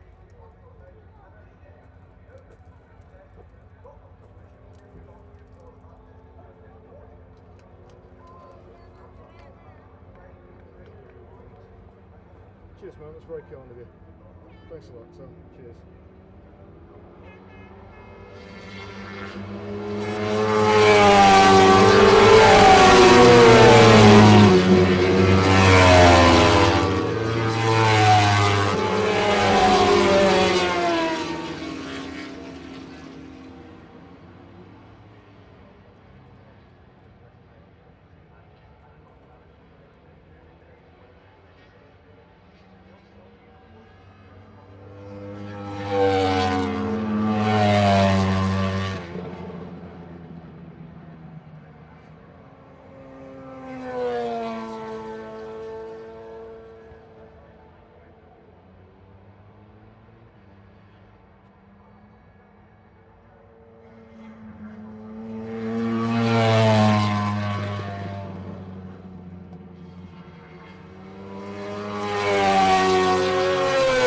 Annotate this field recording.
british motorcycle grand prix 2005 ... moto grand prix qualifying ... one point sony stereo mic to minidisk ...